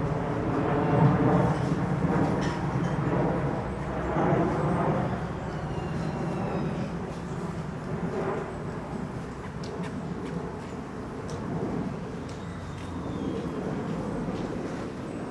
Schonbrunn, kitchen under birdhouse, Vienna
kitchen sounds under an exotic bird house
Vienna, Austria, 18 August 2011